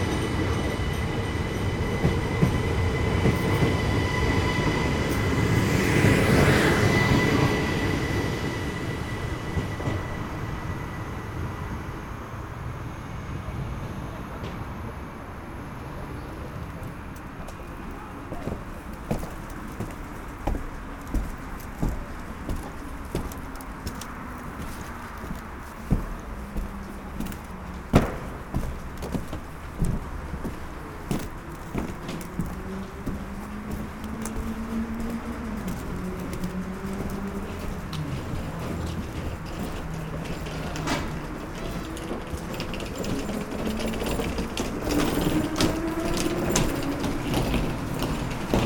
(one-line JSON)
{"title": "Mons, Belgique - Mons station", "date": "2018-12-24 15:54:00", "description": "Mons is a no-station. It's an horrible place, where construction works are engaged since years and years. Nothing is moving all around, like this would be a too complicate building. In this no man's land, some commuters take the train on the Christmas day. It's very quiet, as few people use a so maladjusted place.", "latitude": "50.46", "longitude": "3.94", "altitude": "32", "timezone": "Europe/Brussels"}